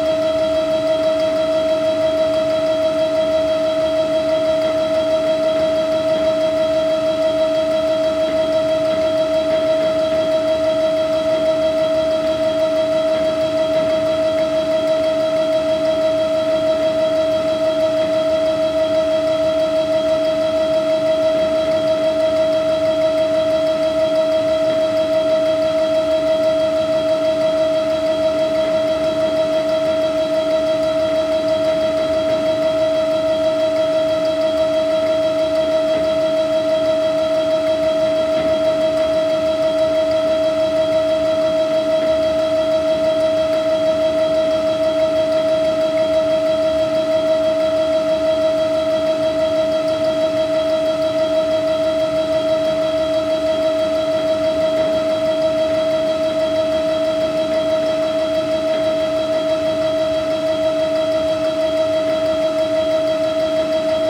Saintes-Maries-de-la-Mer, Frankreich - Château d'Avignon en Camargue - Factory building, 'Le domaine des murmures # 1'
Château d'Avignon en Camargue - Factory building, 'Le domaine des murmures # 1'.
From July, 19th, to Octobre, 19th in 2014, there is a pretty fine sound art exhibiton at the Château d'Avignon en Camargue. Titled 'Le domaine des murmures # 1', several site-specific sound works turn the parc and some of the outbuildings into a pulsating soundscape. Visitors are invited to explore the works of twelve different artists.
In this particular recording, you can hear the drone of an old water pump which was once driven by steam, and is now powered by electricity. You will also notice the complete absence of sound from the installation by Emmanuel Lagarrigue in the same facility.
[Hi-MD-recorder Sony MZ-NH900, Beyerdynamic MCE 82]